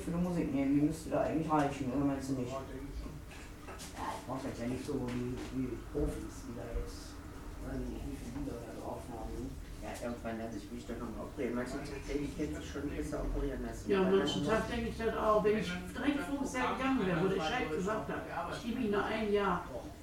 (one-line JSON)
{"title": "Sedansberg, Wuppertal, Deutschland - schützeneck", "date": "2011-02-17 20:04:00", "description": "schützeneck, schützenstr. 109, 42281 wuppertal", "latitude": "51.28", "longitude": "7.19", "altitude": "204", "timezone": "Europe/Berlin"}